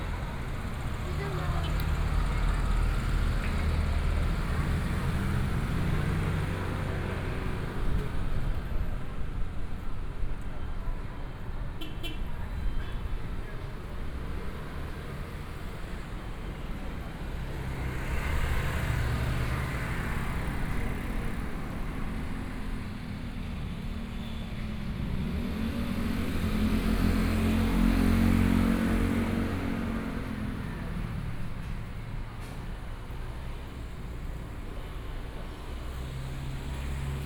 Sec., Douyuan Rd., Erlin Township - At the intersection
At the intersection, Entrance in traditional markets, Traffic Sound, Zoom H4n+ Soundman OKM II